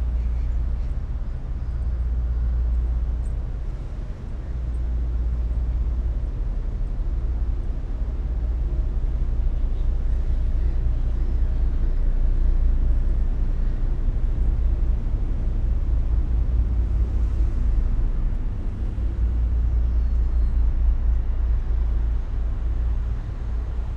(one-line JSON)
{"title": "cologne, hohenzollernbrücke - midnight ambience /w trains, ship, cyclists, gulls", "date": "2020-09-29 23:45:00", "description": "Köln, Cologne, almost midnight on Hohenzollernbrücke train bridge, trains passing by, a ship below on the Rhein river, cyclists and pedestrians. Remarkable deep drones by the freighter ships.\n(Sony PCM D50, Primo EM172)", "latitude": "50.94", "longitude": "6.97", "altitude": "37", "timezone": "Europe/Berlin"}